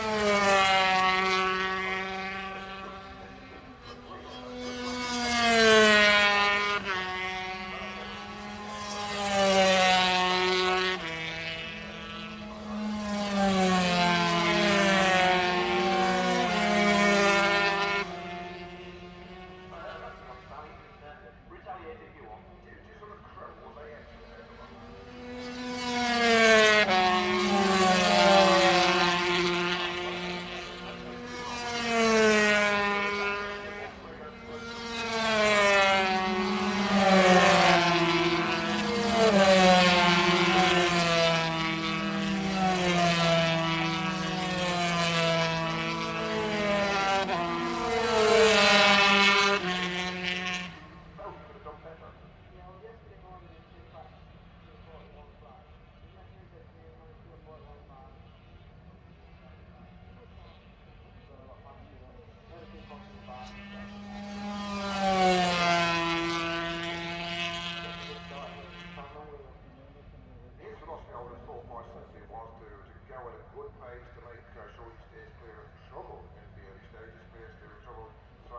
Unnamed Road, Derby, UK - british motorcycle grand prix 2006 ... 125 warm up
british motorcycle grand prix 2006 ... 125 warm up ... one point stereo mic to mini disk ... commentary ...
England, United Kingdom, 2 July 2006, 09:00